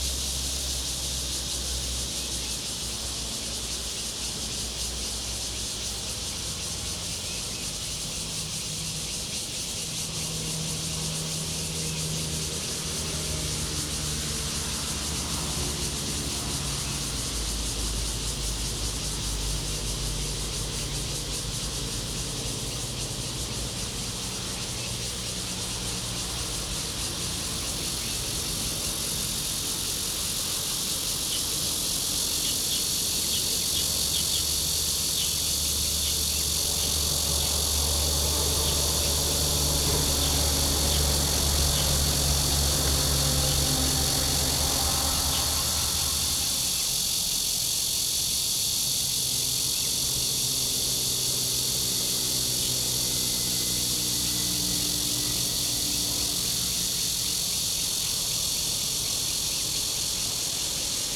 Sec., Minfu Rd., Yangmei Dist., Taoyuan City - In the pool side
In the pool side, Traffic sound, Opposite the train running through, Cicadas, Garbage truck passes, Zoom H2n MS+XY
Taoyuan City, Taiwan, 11 August 2017